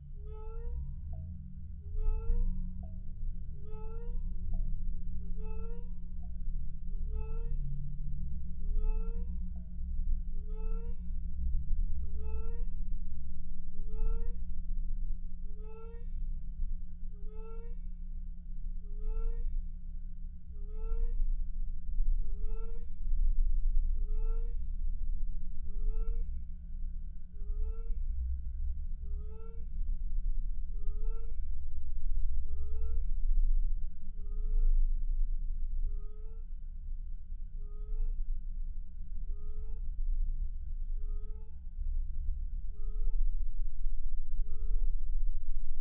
Klaipėda, Lithuania, a bell monument
contact microphones on some kind of monument built with real bell. the bell is actually is not working, but contact microphones can capture subtle sound
28 May 2018